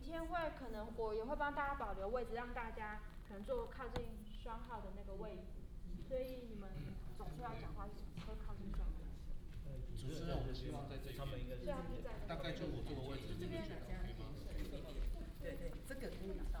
Cloud Gate Theater, New Taipei City - Rehearsed speech
Rehearsed speech
Binaural recordings
Sony PCM D100+ Soundman OKM II
New Taipei City, Taiwan, 4 June 2016, 16:18